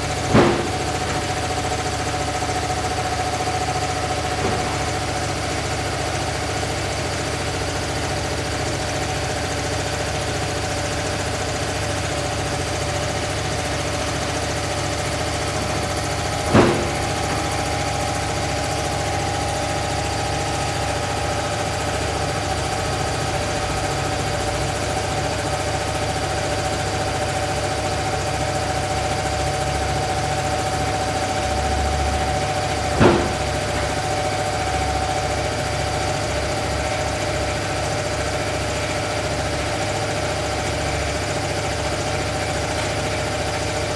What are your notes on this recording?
Outside the factory, Zoom H4n +Rode NT4